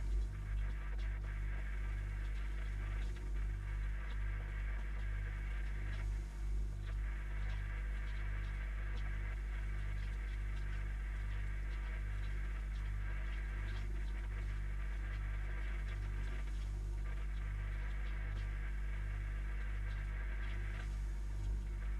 remscheid: johann-sebastian-bach-straße - the city, the country & me: refrigerator
inside a refrigerator
the city, the country & me: march 27, 2014
27 March 2014, 22:55